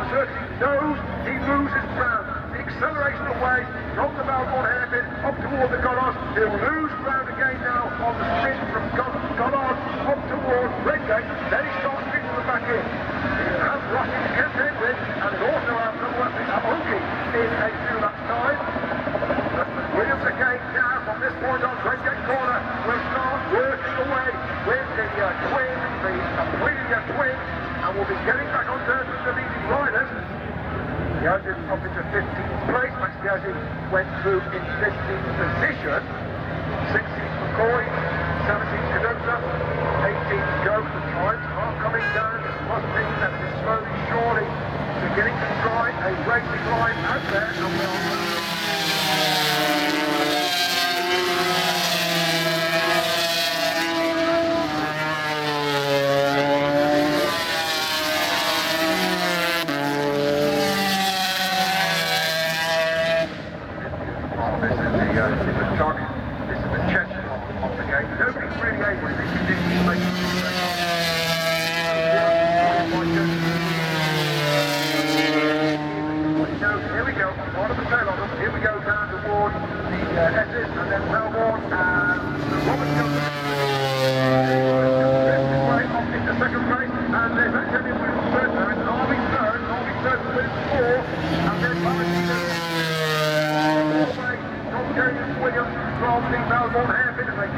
Castle Donington, UK - British Motorcycle Grand Prix 2000
500cc motorcycle race ... part one ... Starkeys ... Donington Park ... the race and all associated crowd noise etc ... Sony ECM 959 one point stereo mic to Sony Minidisk ...
Derby, UK, 9 July 2000, 13:00